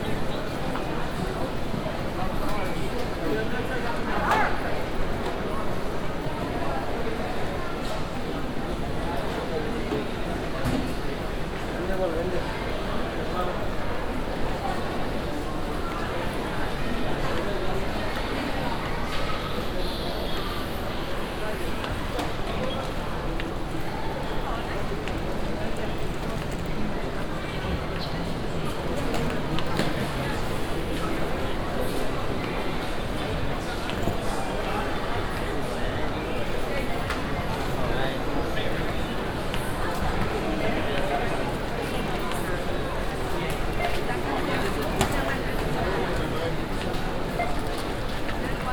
dubai, airport, transit zone
inside the airport transit zone at the luggage check
international soundmap - topographic field recordings and social ambiences